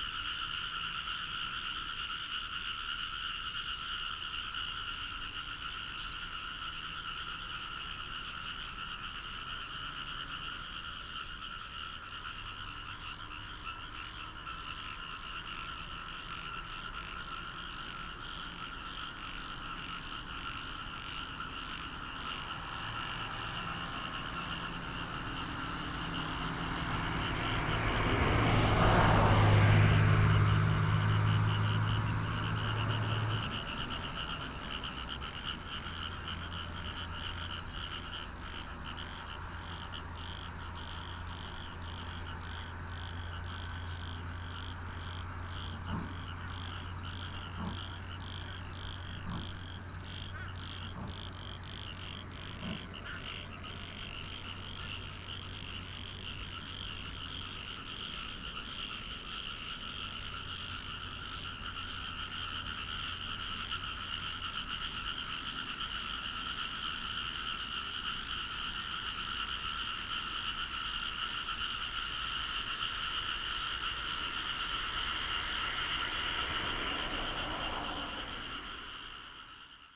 {"title": "南迴公路, Xinxianglan, Taimali Township - early morning", "date": "2018-04-14 05:03:00", "description": "Beside the road, Frog croak, Traffic sound, early morning, Chicken roar, birds sound\nBinaural recordings, Sony PCM D100+ Soundman OKM II", "latitude": "22.58", "longitude": "120.99", "altitude": "6", "timezone": "Asia/Taipei"}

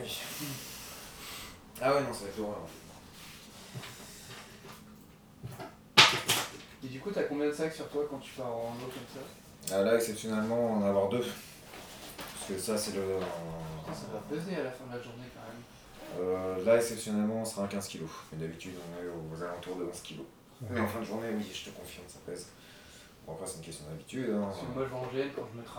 {"title": "Sens, France - My brother home", "date": "2017-07-28 21:30:00", "description": "We are in my brother home, a charming apartment in a longhouse. On this evening, some friends went, they are discussing about Role-Playing ; my brother lend some games. I think it's a representative sound of this place.", "latitude": "48.19", "longitude": "3.28", "altitude": "72", "timezone": "Europe/Paris"}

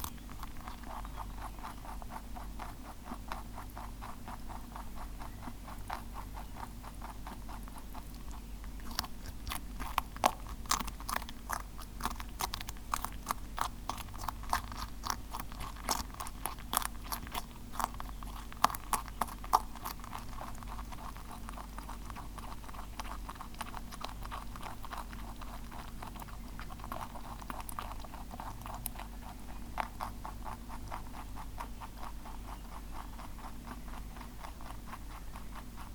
{"title": "Court-St.-Étienne, Belgique - Rabbit eating", "date": "2016-07-11 17:10:00", "description": "Clovis the rabbit is eating some haricots.", "latitude": "50.62", "longitude": "4.54", "altitude": "128", "timezone": "Europe/Brussels"}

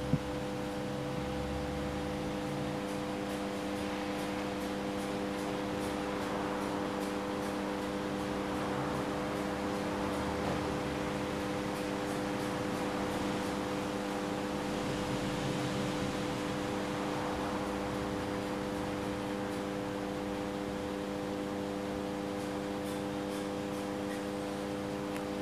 {"title": "ул. Новая, Нижний Новгород, Нижегородская обл., Россия - courtryard", "date": "2022-07-22 22:00:00", "description": "this sound was recorded by members of the Animation Noise Lab\ncourtyard of a residential building", "latitude": "56.31", "longitude": "43.99", "altitude": "181", "timezone": "Europe/Moscow"}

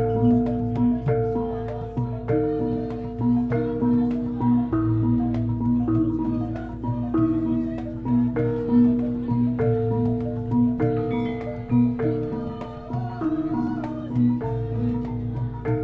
{"title": "Poznan, Lazarz district, Orzeszkowej street, Gamelan concert", "date": "2010-09-11 21:01:00", "description": "Concert of a gamelan ensemble in the back yard of an abandoned hospital in the evening of the opening day of Biennale Mediations", "latitude": "52.40", "longitude": "16.90", "altitude": "90", "timezone": "Europe/Warsaw"}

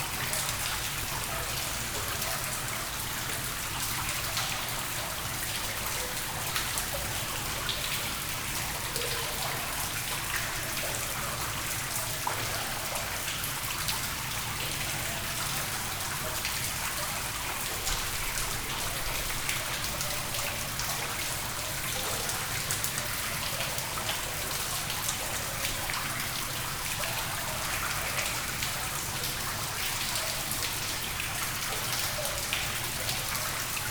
{"title": "Escherange, France - Molvange schaft", "date": "2016-10-30 20:30:00", "description": "At the top of the Molvange schaft, inside the underground mine. Water is falling in a big tank.", "latitude": "49.43", "longitude": "6.06", "altitude": "419", "timezone": "Europe/Paris"}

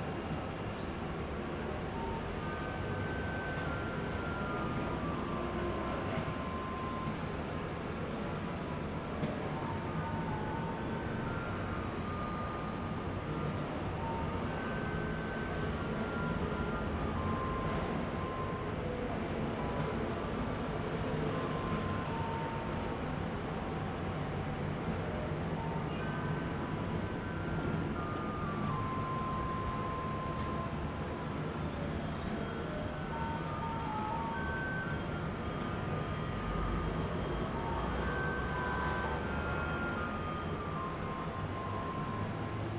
{"title": "Rende 2nd Rd., Bade Dist. - Clear trash time", "date": "2017-11-28 17:00:00", "description": "Clear trash time, Garbage truck arrived, traffic sound, Zoom H2n MS+XY+ Spatial audio", "latitude": "24.94", "longitude": "121.29", "altitude": "141", "timezone": "Asia/Taipei"}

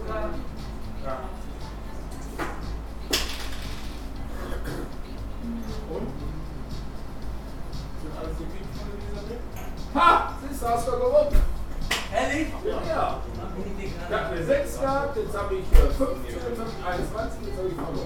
{"title": "gelsenkirchen-horst, harthorststrasse - alte schmiede", "date": "2014-07-23 20:50:00", "latitude": "51.53", "longitude": "7.02", "altitude": "31", "timezone": "Europe/Berlin"}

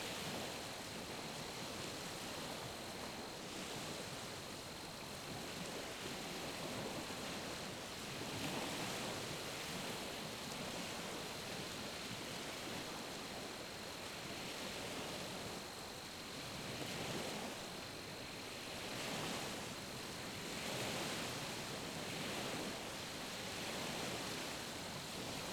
{"title": "Lake Wister State Park", "date": "2022-04-12 21:00:00", "description": "Recorded from a lakeside campsite. The sound of the waves from the lake coming ashore are heard.\nRecorded with a Zoom H5", "latitude": "34.94", "longitude": "-94.78", "altitude": "151", "timezone": "America/Chicago"}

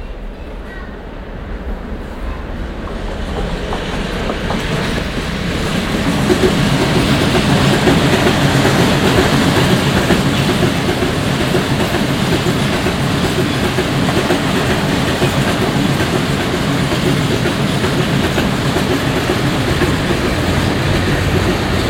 {"title": "cologne, sued, kyllstrasse, züge und kindergarten - koeln, sued, kyllstrasse, züge und kindergarten 02", "description": "zugverkehr auf bahngleisen für güterverkehr und ICE betrieb nahe kindergarten, morgens\nsoundmap nrw:", "latitude": "50.92", "longitude": "6.96", "altitude": "54", "timezone": "GMT+1"}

